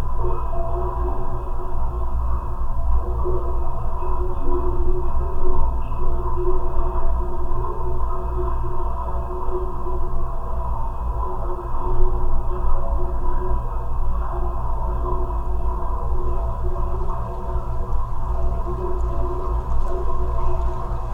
{"title": "Utena, Lithuania, bridge study", "date": "2020-11-24 17:25:00", "description": "first half of the recording: geophone on the railings of the bridge. seconds half: listening from the bridge with conventional microphones", "latitude": "55.50", "longitude": "25.59", "altitude": "104", "timezone": "Europe/Vilnius"}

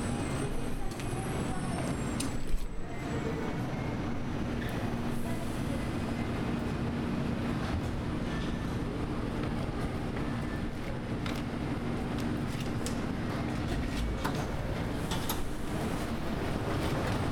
{"title": "Orlando Airport, Florida, Security, Machinery", "date": "2010-06-10 23:25:00", "description": "Orlando Airport, Florida, Going through security and waiting at terminal. Machinery, Institutional design. Field", "latitude": "28.44", "longitude": "-81.32", "altitude": "25", "timezone": "America/New_York"}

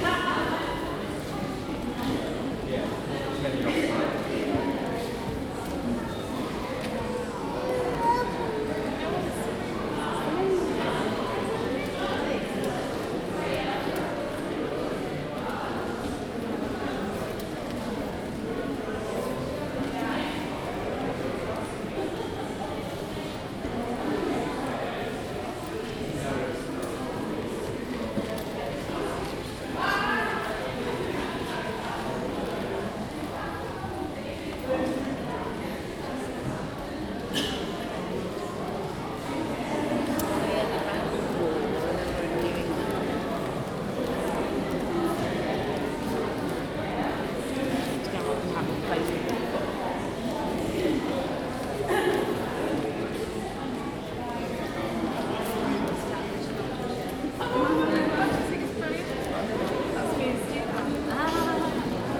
{"title": "Royal Academy of Arts Burlington House, Piccadilly, Mayfair, London, UK - Room V, Summer Exhibition, Royal Academy of Arts.", "date": "2018-08-11 10:15:00", "description": "A rather noisy Room V, Summer Exhibition, Royal Academy of Arts. Recorded on a Zoom H2n.", "latitude": "51.51", "longitude": "-0.14", "altitude": "23", "timezone": "GMT+1"}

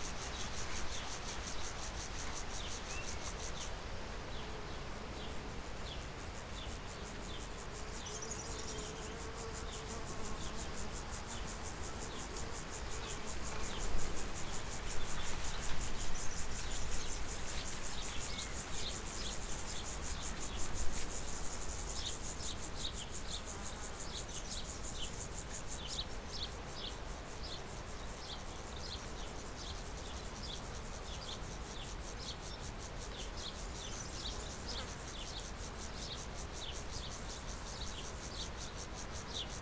{"title": "wind in cornfield, Povoa Das Leiras, Portugal - wind in cornfield", "date": "2012-07-19 11:45:00", "latitude": "40.85", "longitude": "-8.17", "altitude": "715", "timezone": "Europe/Lisbon"}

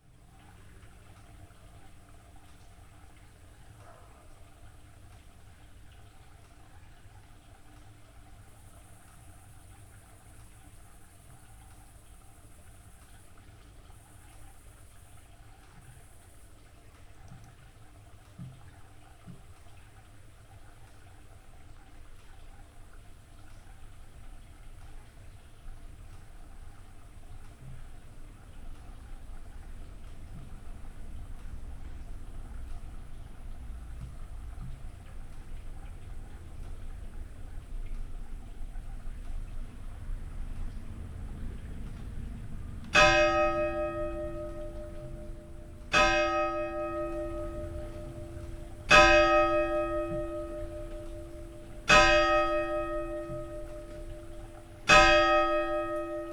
{"title": "Bredereiche, Fürstenberg/Havel, Deutschland - church bells, night ambience", "date": "2016-07-01 23:00:00", "description": "at the small half-timber church, built late 17th century, village of Bredereiche, church bells at 11\n(Sony PCM D50, Primo EM172))", "latitude": "53.14", "longitude": "13.24", "altitude": "56", "timezone": "Europe/Berlin"}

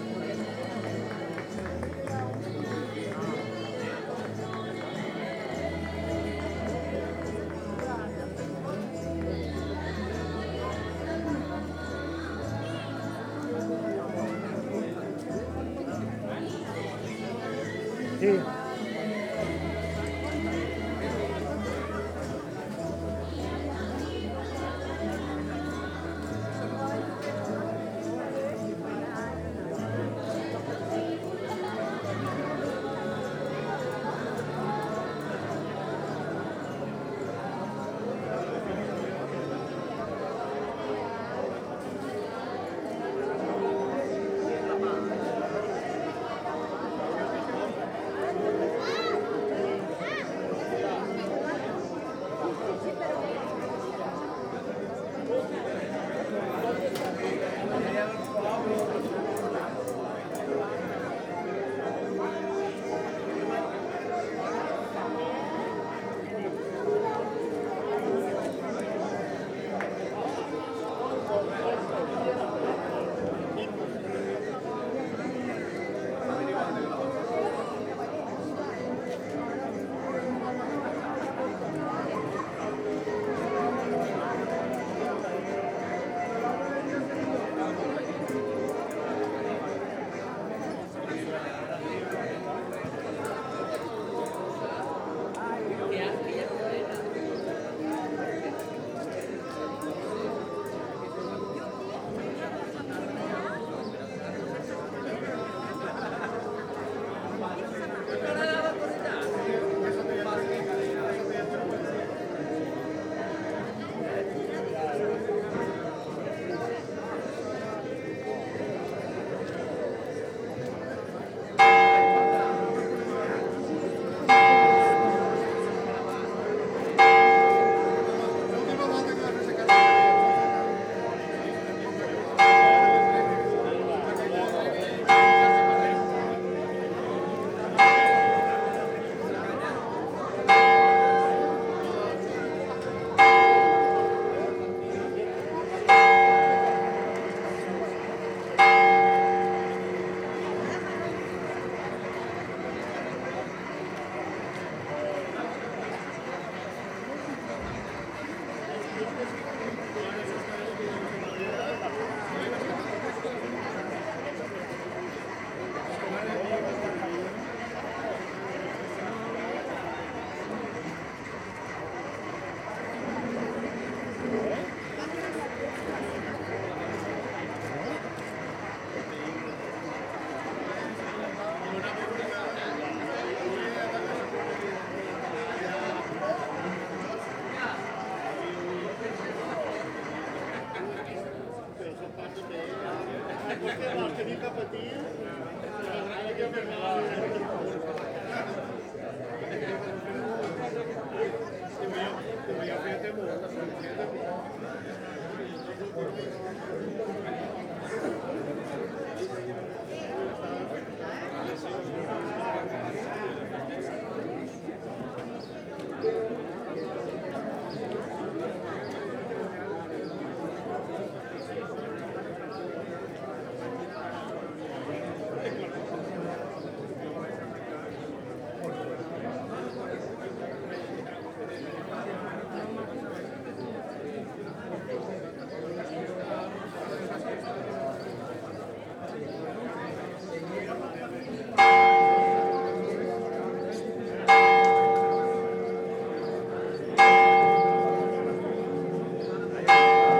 {"title": "Plaça Major, Tàrbena, Alicante, Espagne - Tàrbena - Espagne XXII Fira Gastronomica i Artesanal de Tàrbena - ambiance", "date": "2022-07-16 11:00:00", "description": "Tàrbena - Province d'allicante - Espagne\nXXII Fira Gastronomica i Artesanal de Tàrbena\nOuverture de la foire en musique (caisse claire et instrument à anche - Dulzaina y tambor)\nAmbiance\nZOOM F3 + AKG 451B", "latitude": "38.69", "longitude": "-0.10", "altitude": "561", "timezone": "Europe/Madrid"}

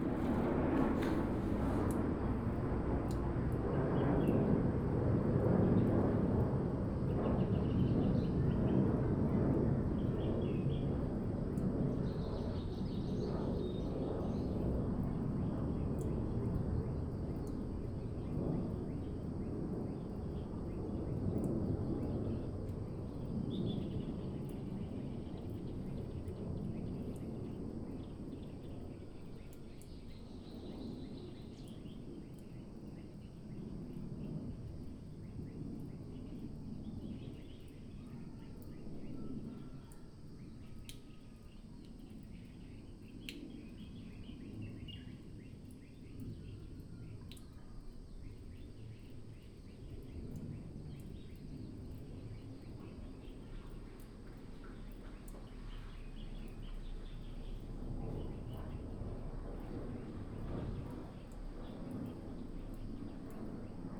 {"title": "介達國小, 金峰鄉正興村 - in the morning", "date": "2018-04-03 07:20:00", "description": "in the morning, The sound of the aircraft, Bird cry", "latitude": "22.60", "longitude": "121.00", "altitude": "50", "timezone": "Asia/Taipei"}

